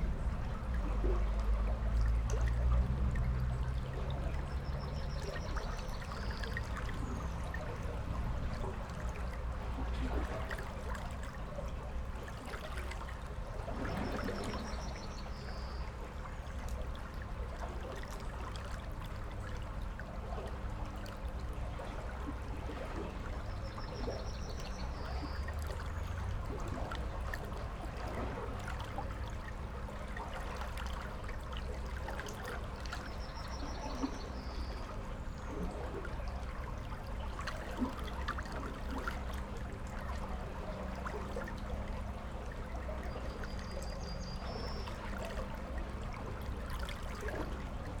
Utena, Lithuania, flooded river
after heavy rains during the last days our rivers are full of waters just like in springtime. 4 channels recording capturing the soundscape of the flooded river. 2 omnis and 2 hydrophones